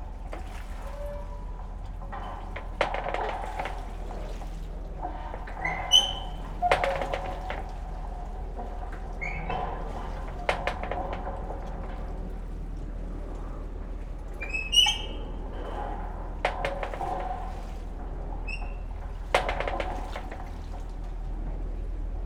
undulating chain...coast guard wharf at Donghae...
강원도, 대한민국, 25 October 2021